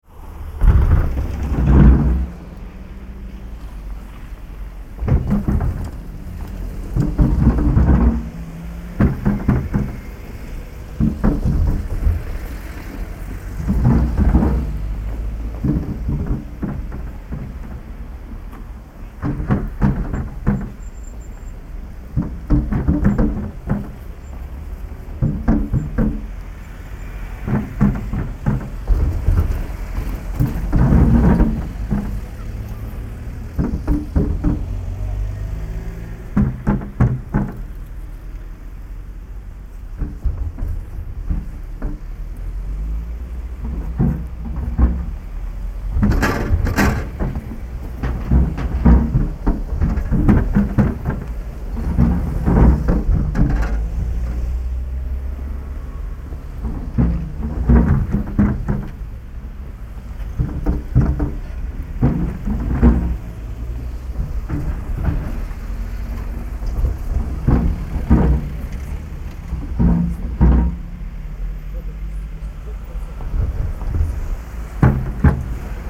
{"title": "Severodvinsk, Russia - railway crossing", "date": "2013-01-04 13:20:00", "description": "Railway crossing.\nЖелезнодорожный переезд на проспекте Морском.", "latitude": "64.54", "longitude": "39.78", "altitude": "7", "timezone": "Europe/Moscow"}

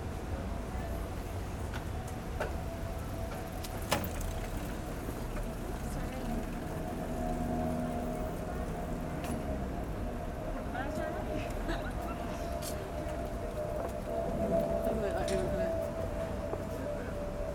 {"title": "Broadway Market, Hackney, London, UK - Walk Through Broadway Market to Regents Canal", "date": "2010-10-17 11:26:00", "description": "A walk through Broadway Market in Hackney, East London up to Acton's Lock on Regents Canal and back down into the market. Recorded on a Roland hand-held digital recorder (R-05?) with in-built stereo mics.", "latitude": "51.54", "longitude": "-0.06", "altitude": "18", "timezone": "Europe/London"}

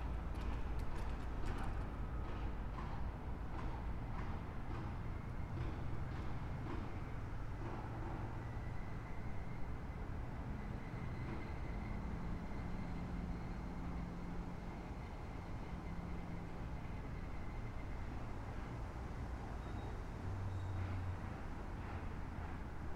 {"title": "Ljubljanska ul., Maribor, Slovenia - corners for one minute", "date": "2012-08-08 15:39:00", "description": "one minute for this corner - ljubljanska ulica 19", "latitude": "46.55", "longitude": "15.65", "altitude": "279", "timezone": "Europe/Ljubljana"}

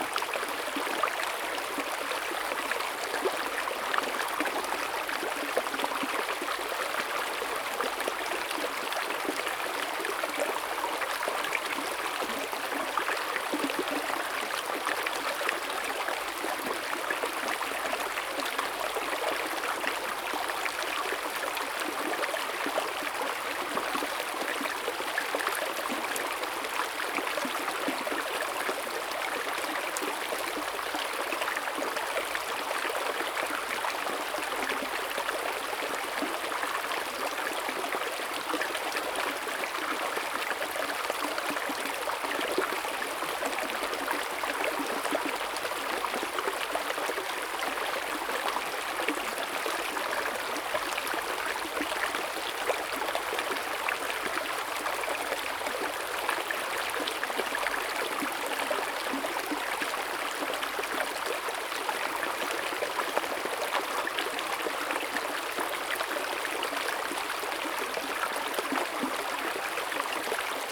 {"title": "上種瓜坑, 成功里 Puli Township - Upstream", "date": "2016-04-28 10:38:00", "description": "Sound of water, Small streams, Streams and Drop\nZoom H2n MS+XY", "latitude": "23.96", "longitude": "120.89", "altitude": "464", "timezone": "Asia/Taipei"}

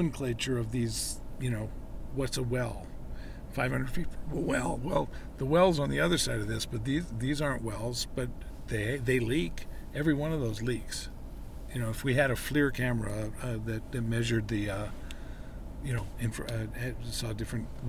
{"title": "Windsor, CO, USA - Fracking Tour", "date": "2018-11-09 11:07:00", "description": "A group of journalists visits a neighborhood built beside oil fracking wells.", "latitude": "40.49", "longitude": "-104.87", "altitude": "1461", "timezone": "GMT+1"}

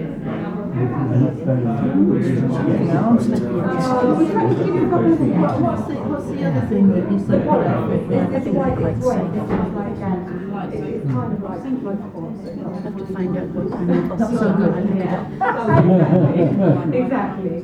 {"title": "Hotel Restaurant, Aldeburgh, UK", "date": "2022-02-07 12:58:00", "description": "Voices and random ambient sounds in a nice hotel restaurant during a busy lunchtime. Rather muffled sound due to my recorder and rucksack being laid on the floor by the window which seems to have emphasised the low frequencies. I applied a little low cut to help but not very successfully.\nMixPre 6 II and two Sennheiser MKH 8020s", "latitude": "52.15", "longitude": "1.60", "altitude": "4", "timezone": "Europe/London"}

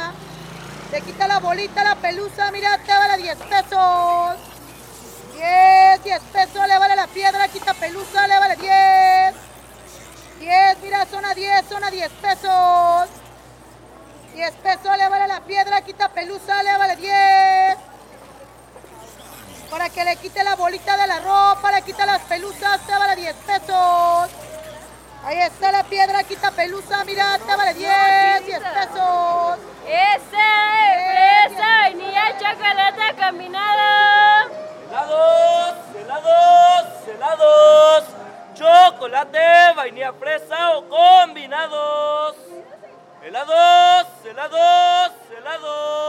{"title": "Jardín de San Luis Park, Av. 10 Ote., Centro histórico de Puebla, Puebla, Pue., Mexique - Puebla (Mexique) - 5 de Mayo", "date": "2019-09-20 10:00:00", "description": "Puebla (Mexique)\nLa rue est saturée d'annonces publicitaires.\nambiance", "latitude": "19.05", "longitude": "-98.20", "altitude": "2155", "timezone": "America/Mexico_City"}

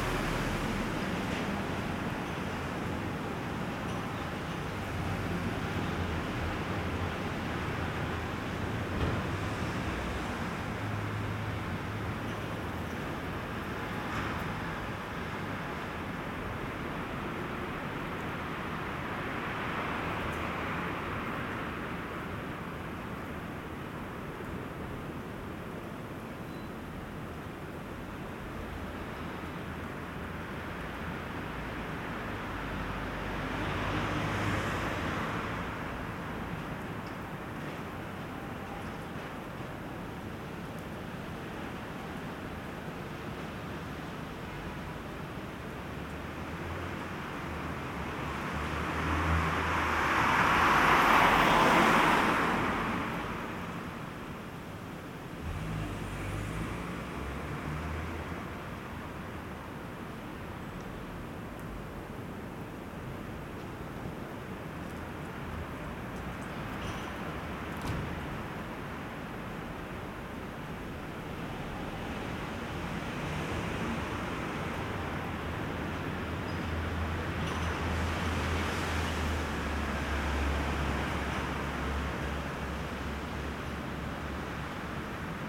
{"title": "Rue Capouillet, Saint-Gilles, Belgique - Moderate Eunice storm in Brussels", "date": "2022-02-18 17:30:00", "description": "Windy but not stormy.\nTech Note : Ambeo Smart Headset binaural → iPhone, listen with headphones.", "latitude": "50.83", "longitude": "4.35", "altitude": "66", "timezone": "Europe/Brussels"}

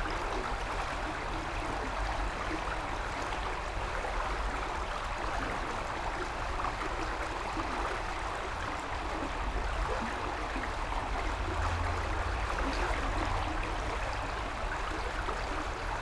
{"title": "Rue Léon Metz, Esch-sur-Alzette, Luxemburg - sound of river Alzette in a canal", "date": "2022-05-11 20:20:00", "description": "Sound of river Alzette in a concrete canal, near Rue Léon Metz\n(Sony PCM D50, Primo EM272)", "latitude": "49.50", "longitude": "5.99", "altitude": "289", "timezone": "Europe/Luxembourg"}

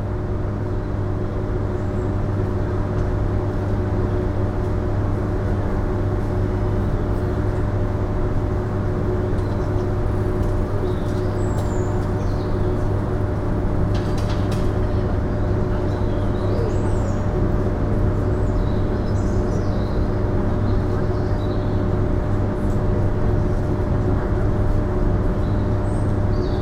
{"date": "2011-10-29 14:03:00", "description": "Brussels, Rue Wiertz - Parc Leopold\nJust behind the European parliament, a huge air conditioning system.", "latitude": "50.84", "longitude": "4.38", "altitude": "77", "timezone": "Europe/Brussels"}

